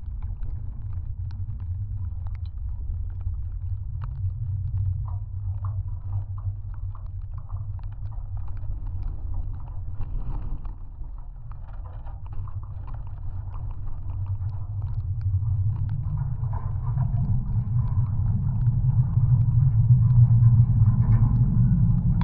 Zalvaris Park, Lithuania, support wires
contact mics on some watchtower's support wires. wind and drizzle
17 March 2019, 16:20